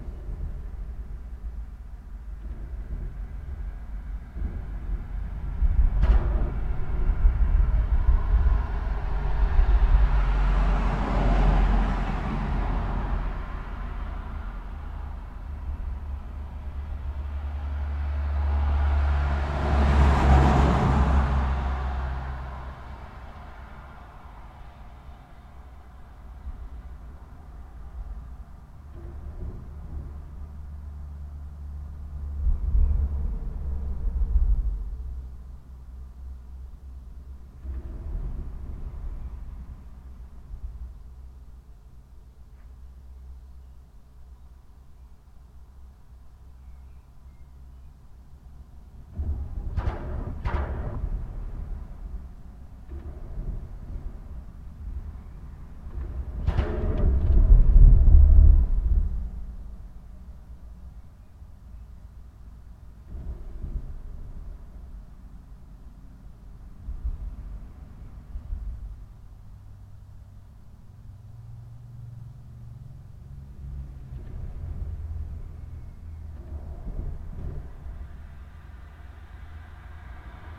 Ringaudai, Lithuania - Under a highway bridge
Highway bridge, recorded from below. Bridge structure is bumping and reverberating from the traffic passing by above and below the bridge. Recorded with ZOOM H5.
1 May 2020, 12:00